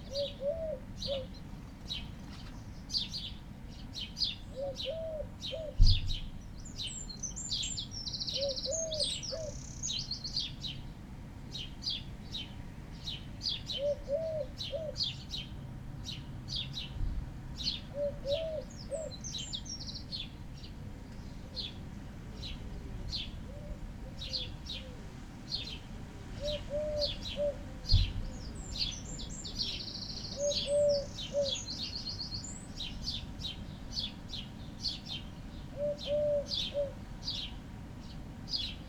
Luttons, UK - a moving away thunderstorm ...
a moving away thunderstorm ... pre-amped mics in a SASS ... bird calls ... song from ... wren ... house sparrow ... blackbird ... collared dove ... wood pigeon ... crow ... linnet ... starling ... background noise ... traffic ... a flag snapping ... ornamental lights dinging off wood work ...
27 July, 06:30